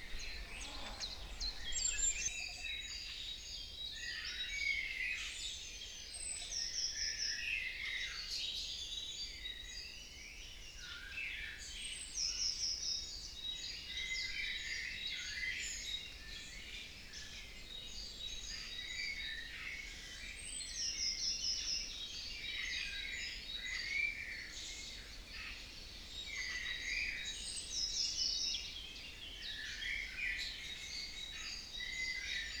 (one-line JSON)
{"title": "Branišov, Ústí, Czechia - Dawn Chorus in Branišov", "date": "2020-05-03 04:15:00", "description": "Dawn Chorus recorded and from different device broadcasted for the Reveil 2020. Standing in the garden of the baroque priest house, near the church of saint Wenceslaw and cemetery. Windy, cold and occasionally showers.", "latitude": "49.47", "longitude": "15.43", "altitude": "654", "timezone": "Europe/Prague"}